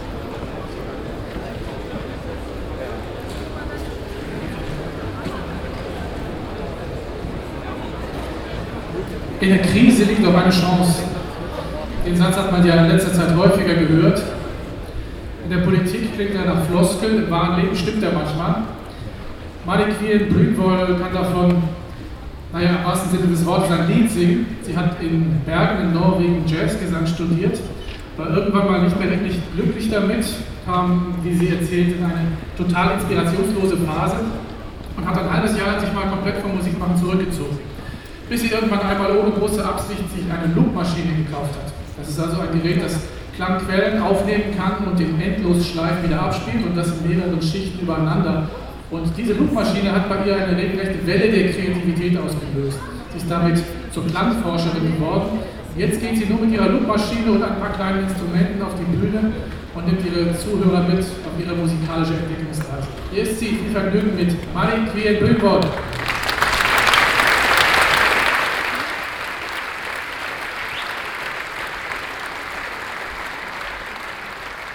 moers, moers festival, tent atmo and announcement
inside the festival circus tent at the 39th moers festival - audience atmosphere and an announcement
soundmap nrw - topographic field recordings and social ambiences
Moers, Germany, 4 June 2010